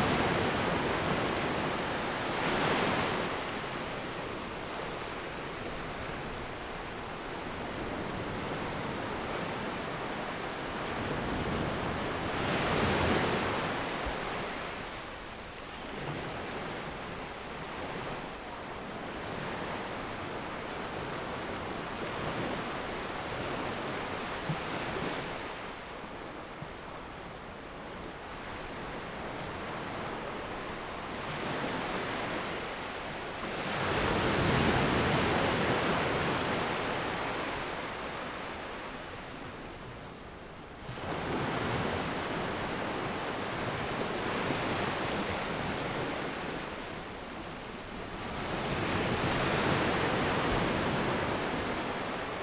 復興村, Nangan Township - On the coast
On the coast, Sound of the waves
Zoom H6 +Rode NT4